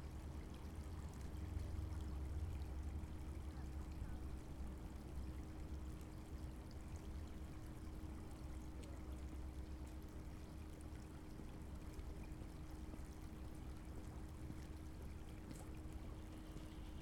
I recorded it next to Boulder Creek Path.
CO, USA, 4 February 2013, 15:00